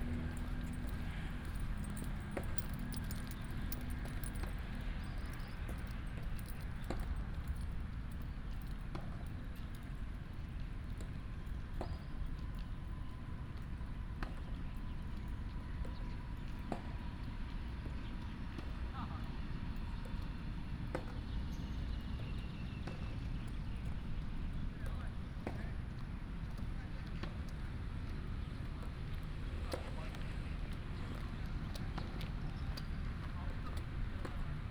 空軍十二村, Hsinchu City - Footsteps
in the park, Birds sound, Footsteps, Formerly from the Chinese army moved to Taiwans residence, Binaural recordings, Sony PCM D100+ Soundman OKM II
15 September 2017, ~06:00